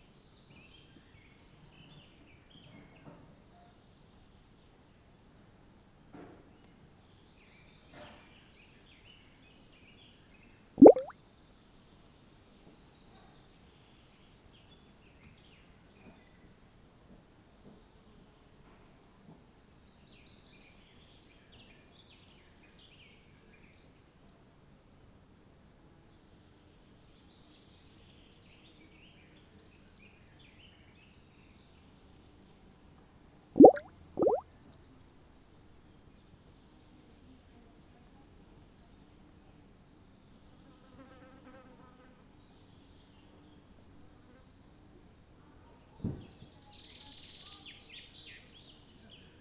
Naujasodis, Lithuania, gurgling at excrement tank
excrement tank buried in ground - passing by I heard some strange gurgling and so it is:)